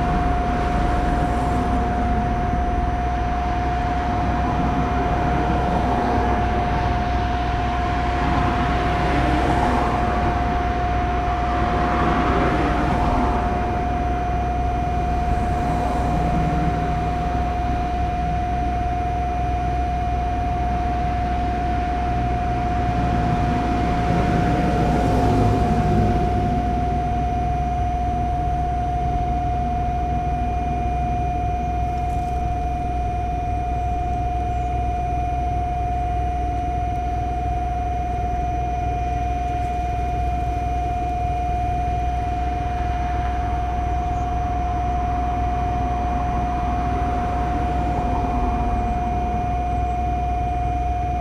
hum and whine of commercial AC units and exhaust fans on top of a restaurant. Jaroczynskiego street is busy all day long so you can hear a lot of traffic (sony d50 internal mics)
24 August, ~12:00